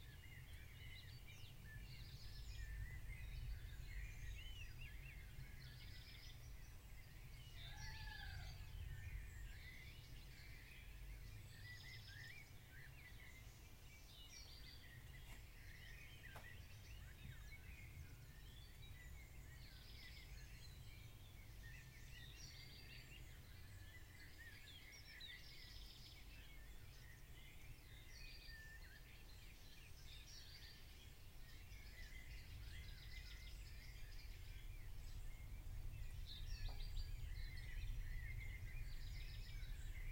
{"title": "rural atmosphere, a. m. - Propach, rural atmosphere, 4 a. m.", "description": "recorded june 1, 2008 - project: \"hasenbrot - a private sound diary\"", "latitude": "50.85", "longitude": "7.52", "altitude": "263", "timezone": "GMT+1"}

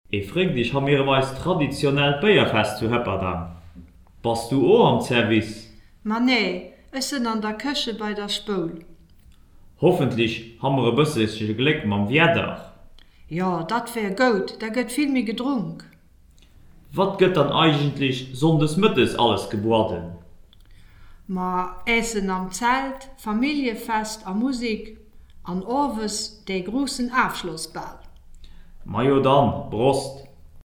{"title": "hupperdange, local dialect", "date": "2011-07-11 12:22:00", "description": "The local dialect of Hupperdange here spoken in a short demonstrative conversation by Danielle Schroeder and Claude Schank. Indoor stereofield recording.\nHupperdange, regionaler Dialekt\nDer regionale Dialekt von Hupperdange, hier freundlicherweise vorgeführt und gesprochen in einer kurzen Unterhaltung von Danielle Schroeder und Claude Schank. Stereophone Innenaufnahme.\nHupperdange, dialecte local\nLe dialecte local d’Hupperdange parlé dans une courte conversation exemplaire entre Danielle Schroeder et Claude Schank. Enregistrement en intérieur.\nProject - Klangraum Our - topographic field recordings, sound objects and social ambiences", "latitude": "50.10", "longitude": "6.06", "timezone": "Europe/Luxembourg"}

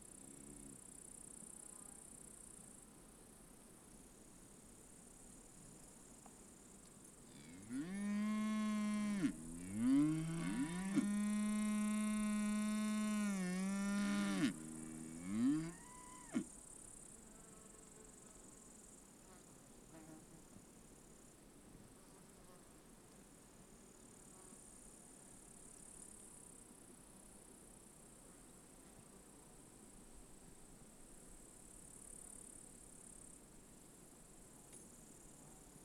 {"title": "Lithuania, village soundscape", "date": "2011-08-05 14:10:00", "description": "a soundscape near the Siaudiniai mound", "latitude": "55.55", "longitude": "25.48", "altitude": "121", "timezone": "Europe/Vilnius"}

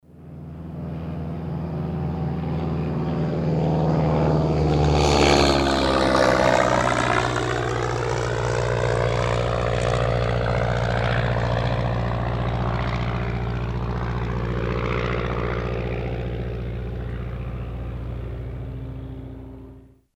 {"title": "heiligenhaus, wiel, sportflugplatz", "date": "2008-06-23 21:14:00", "description": "spring 07 in the morning, start eines einpropelligen sportflugzeugs\nproject: :resonanzen - neanderland soundmap nrw - sound in public spaces - in & outdoor nearfield recordings", "latitude": "51.30", "longitude": "6.95", "altitude": "146", "timezone": "Europe/Berlin"}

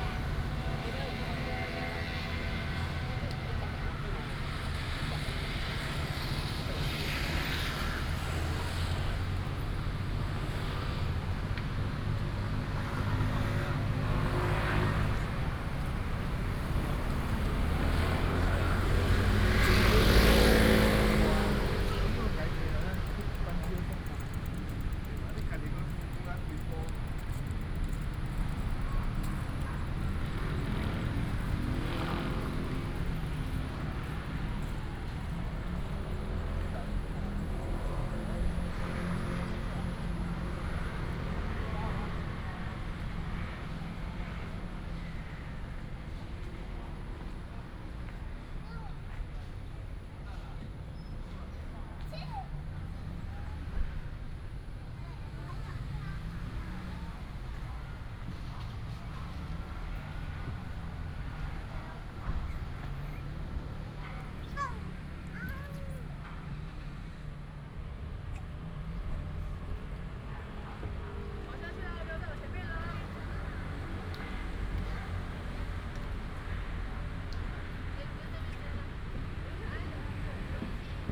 {"title": "頭家公園, Tanzi Dist., Taichung City - in the Park", "date": "2017-10-09 18:21:00", "description": "in the Park, Traffic sound, A group of older people chatting at the junction, Childrens play area, dog sound, Binaural recordings, Sony PCM D100+ Soundman OKM II", "latitude": "24.19", "longitude": "120.70", "altitude": "154", "timezone": "Asia/Taipei"}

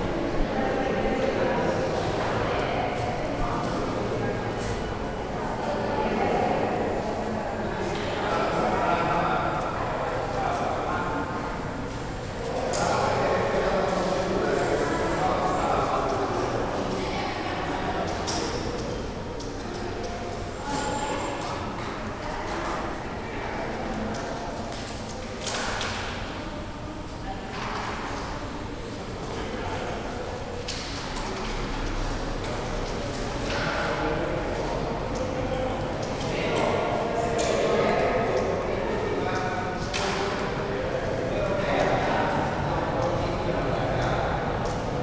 {"title": "Milano, Italia - WLD. macao, the new center of art and culture", "date": "2012-07-19 18:15:00", "latitude": "45.46", "longitude": "9.22", "altitude": "114", "timezone": "Europe/Rome"}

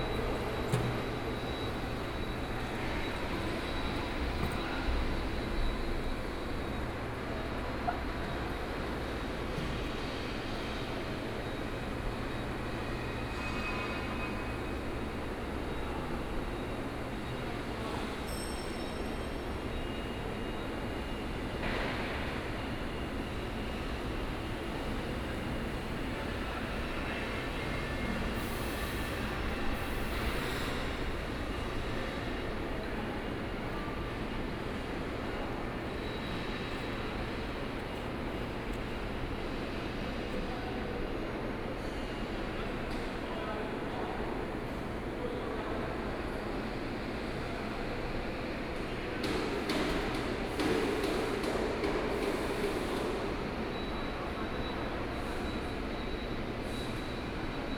{"title": "富岡機廠, Yangmei City - In the railway factory", "date": "2014-08-06 13:57:00", "description": "In the railway factory", "latitude": "24.93", "longitude": "121.06", "altitude": "92", "timezone": "Asia/Taipei"}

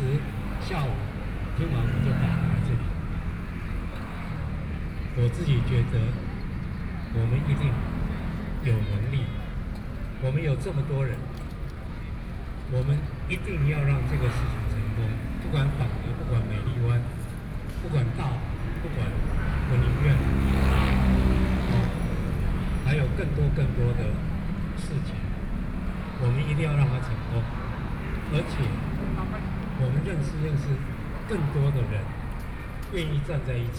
{"title": "Taipei - anti–nuclear power", "date": "2013-09-06 21:08:00", "description": "anti–nuclear power, in front of the Plaza, Broadcast sound and traffic noise, Sony PCM D50 + Soundman OKM II", "latitude": "25.04", "longitude": "121.52", "altitude": "8", "timezone": "Asia/Taipei"}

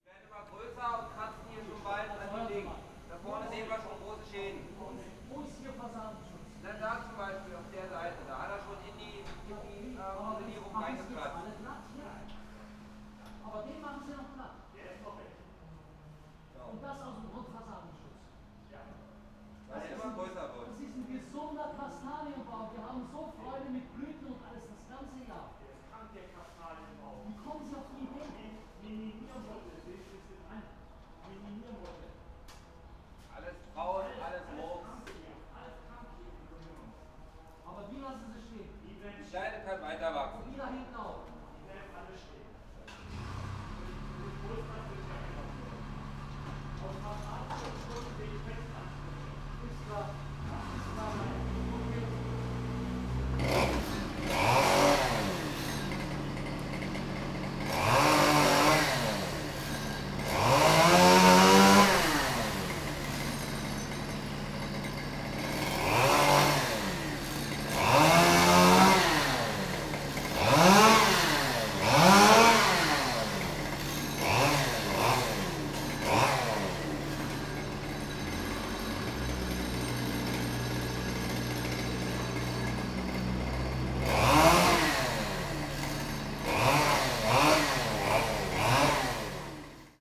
{"title": "Berlin Bürknerstr., backyard window - tree cutting", "date": "2010-10-27 11:15:00", "description": "workers cutting trees in the backyard, neighbour complains", "latitude": "52.49", "longitude": "13.42", "altitude": "45", "timezone": "Europe/Berlin"}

